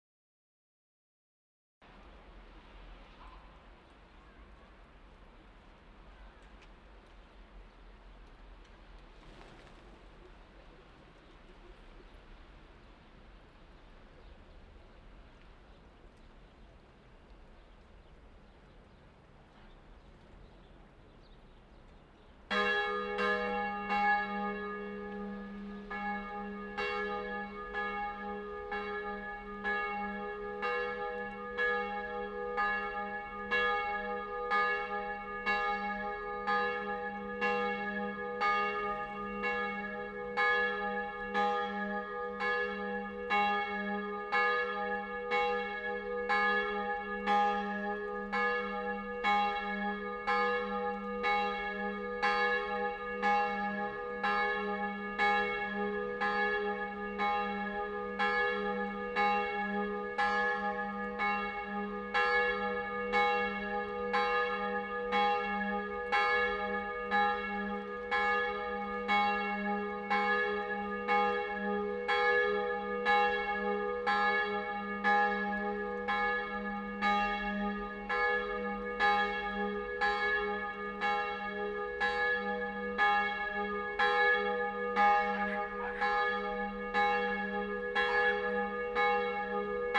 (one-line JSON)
{"title": "Favoriten, Wien, Österreich - noon chime", "date": "2013-03-27 12:00:00", "description": "chimes at noon, ambience noise, dogs, birds, traffic. recorded in 6th floor, recorder pointing to the church. - recorded with a zoom Q3", "latitude": "48.18", "longitude": "16.38", "altitude": "209", "timezone": "Europe/Vienna"}